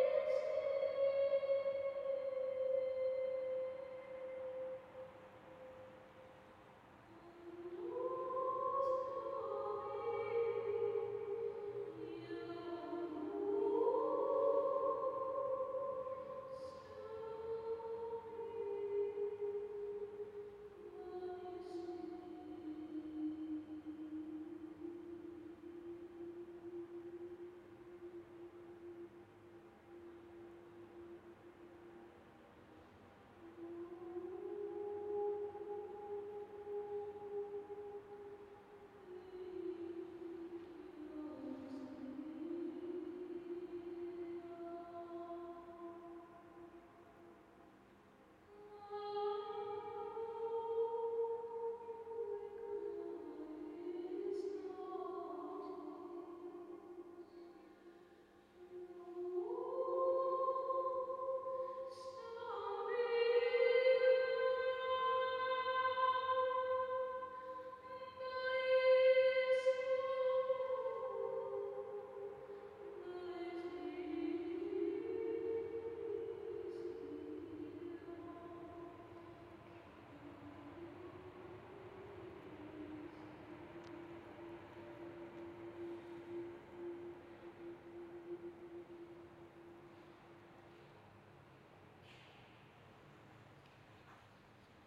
Neustädter Marienkirche, Papenmarkt, Bielefeld, Deutschland - church with female singer
empty church, traffic outside, singer oona kastner rehearsing
Bielefeld, Germany